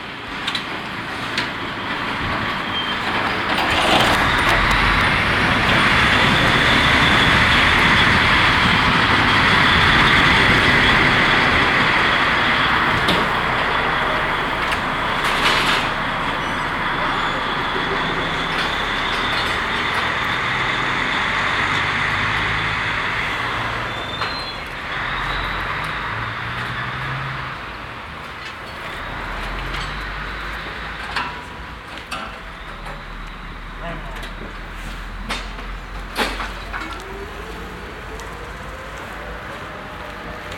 abladen von beladenen paletten mit elektrischem hubwagen und gabelstabler von einem lkw, mittags
soundmap nrw:
social ambiences, topographic fieldrecordings